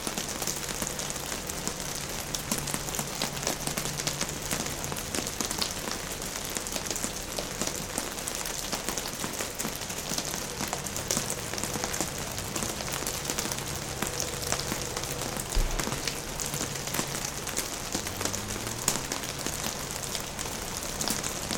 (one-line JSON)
{"title": "Mooste Estonia, rain on ice on snow", "date": "2011-01-17 17:07:00", "description": "terrible weather, rain on ice on snow", "latitude": "58.16", "longitude": "27.19", "altitude": "50", "timezone": "Europe/Berlin"}